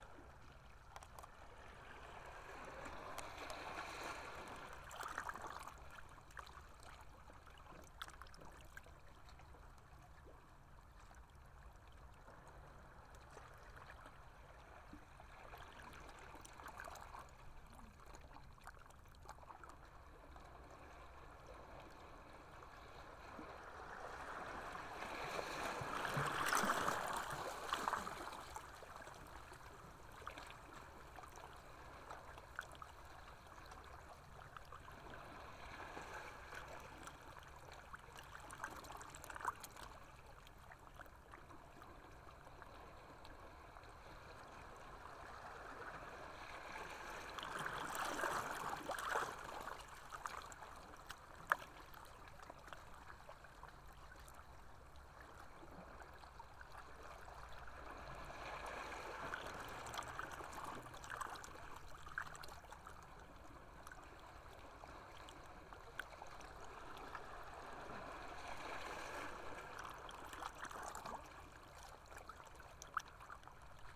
Minnehaha Avenue, Takapuna, Auckland, New Zealand - Waves and lava log hollows
Waves hitting the hollows in lava, where tree logs once were
26 August 2020